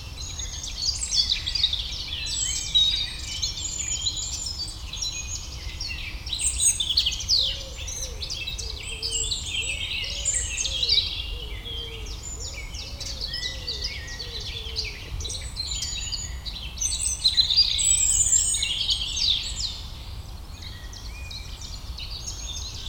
Court-St.-Étienne, Belgique - Forest and birds
It's very uncommon to have a short time without planes and I took advantage to record the forest during this short period. The masters of the woods : Robin, Common Pheasant, Eurasian Blackcap, Wood Pigeon, Blackbird, Common Chiffchaff. Discreet : Eurasian Wren, Great Spotted Woodpecker (5:50 mn), Western Jackdaw, one human and a dog, plump mosquito on the microphone.
Court-St.-Étienne, Belgium, 18 April 2018